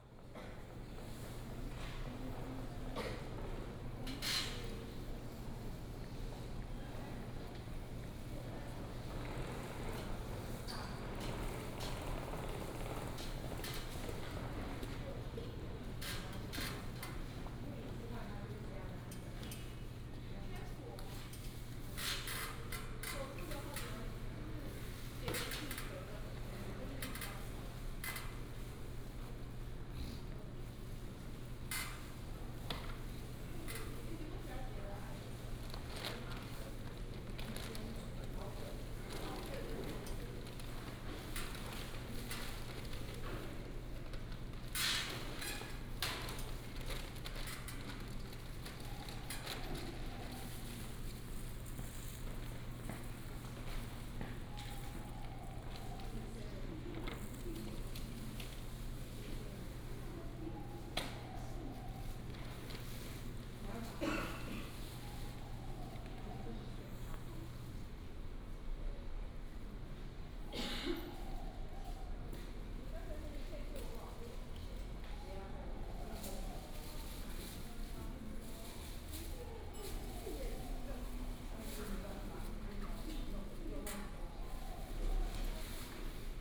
Taoyuan Station, 桃園市 Taiwan - At the station platform
At the station platform, Station broadcast message sound, Luggage, lunar New Year, birds sound
Binaural recordings, Sony PCM D100+ Soundman OKM II